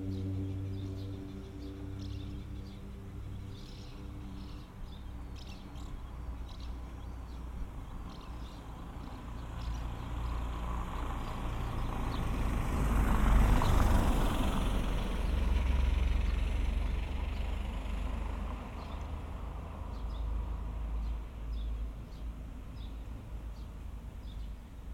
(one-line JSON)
{"title": "Ventspils, Latvia, street corner", "date": "2021-07-14 14:20:00", "description": "standing at the street corner", "latitude": "57.39", "longitude": "21.54", "altitude": "4", "timezone": "Europe/Riga"}